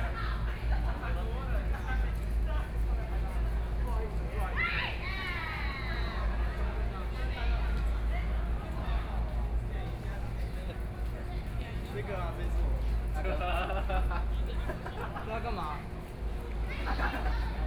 Art the square outside of galleries, Many students
Sony PCM D50+ Soundman OKM II